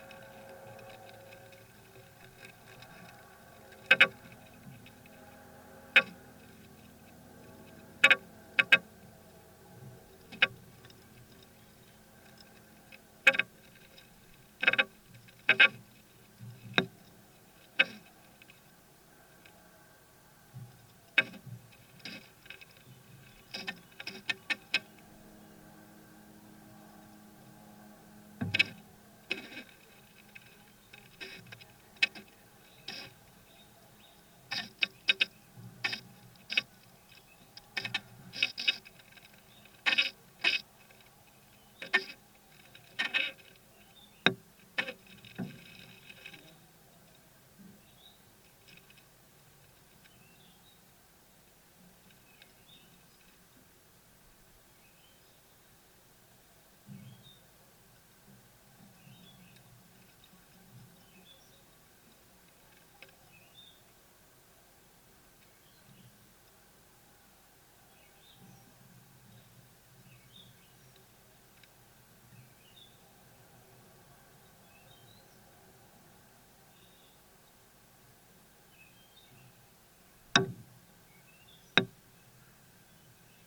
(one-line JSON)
{"title": "Linden, Randburg, South Africa - Beetles at work in the woodwork!", "date": "2016-11-06 18:30:00", "description": "Beetles coming and going to their nest in the woodwork of the patio awning. Piezo contact mics to Sony ICD-UX512", "latitude": "-26.14", "longitude": "28.00", "altitude": "1624", "timezone": "Africa/Johannesburg"}